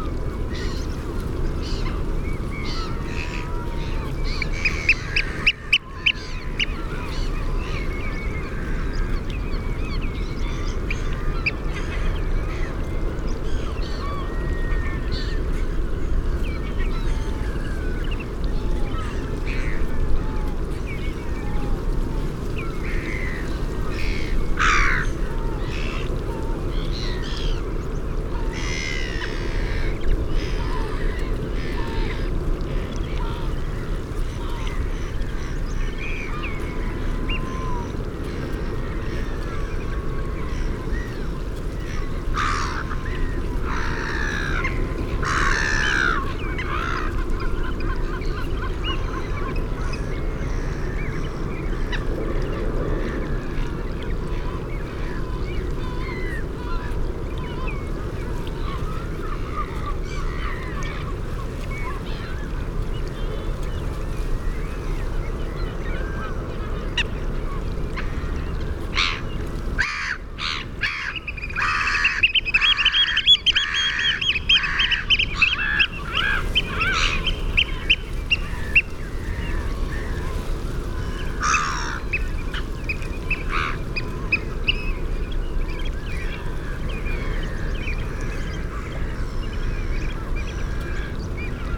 Woodbridge, UK - Cuckolds Lagoon soundscape ...
Cuckolds lagoon soundscape ... RSPB Havergate Island ... fixed parabolic to minidisk ... bird calls ... song from ... canada goose ... curlew ... dunlin ... redshank ... oystercatcher ... ringed plover ... grey plover ... godwit sp ..? black-headed gull ... herring gull ... grey heron ... sandwich tern ... meadow pipit ... lots of background noise ... waves breaking on Orfordness ... ships anchor chains ...